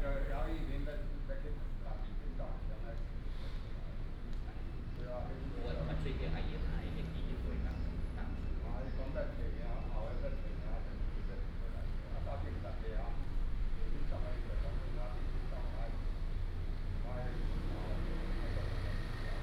{"title": "天公壇, Hsinchu City - Walking in the temple", "date": "2017-09-15 06:24:00", "description": "Walking in the temple, Binaural recordings, Sony PCM D100+ Soundman OKM II", "latitude": "24.80", "longitude": "120.96", "altitude": "24", "timezone": "Asia/Taipei"}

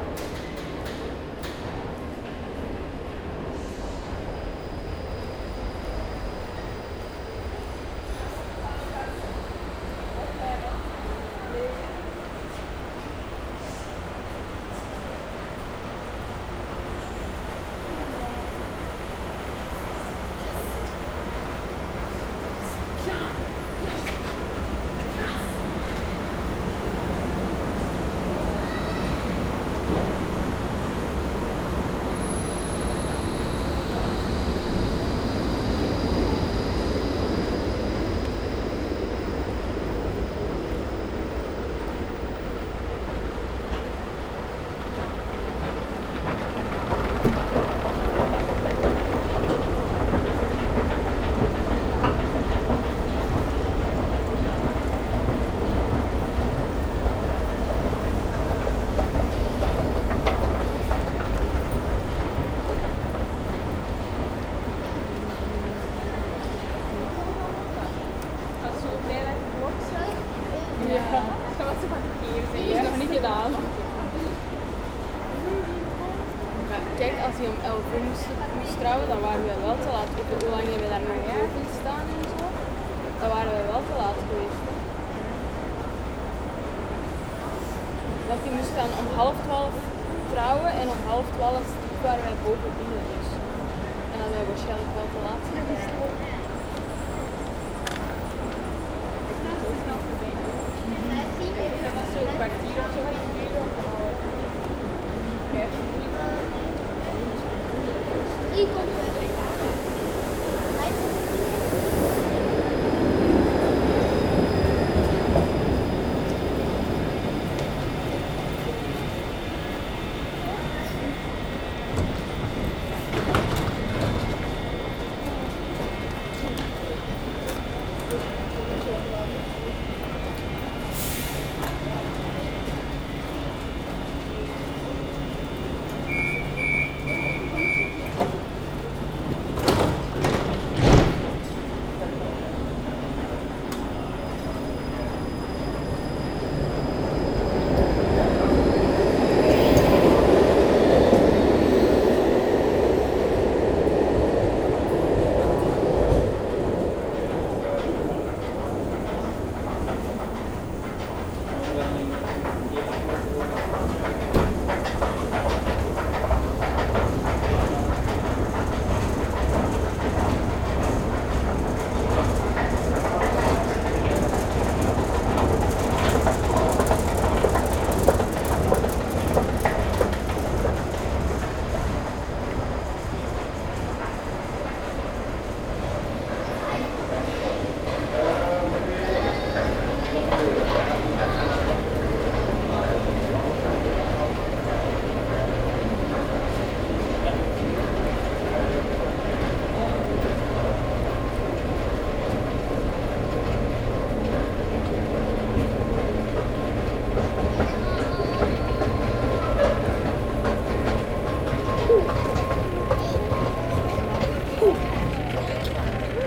{"title": "Antwerpen, Belgique - Meir metro station", "date": "2018-08-04 13:20:00", "description": "A simple walk into the Meir metro station, quiet on this saturday afternoon because it's very hot.", "latitude": "51.22", "longitude": "4.41", "altitude": "9", "timezone": "GMT+1"}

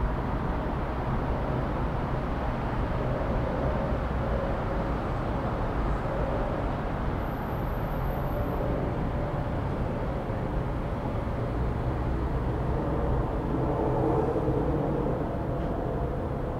{"title": "Bermondsey, Londýn, Spojené království - wade house rooftop", "date": "2012-10-18 19:00:00", "description": "rooftop of wade house", "latitude": "51.50", "longitude": "-0.07", "altitude": "6", "timezone": "Europe/London"}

